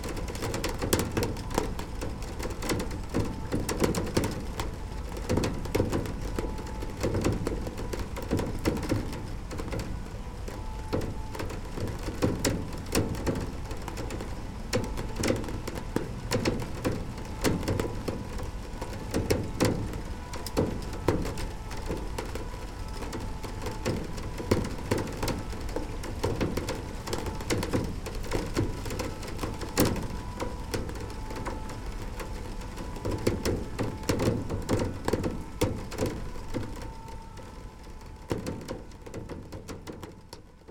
Saint-Fargeau, Paris, France - Rain on a Roller shutter box CLOSE
Pluie sur un coffret de volet roulant... si si le truc pour les stores la.
Rain falling on a roller shutter box at the window, a little ambiance of the city.
/Oktava mk012 ORTF & SD mixpre & Zoom h4n
13 October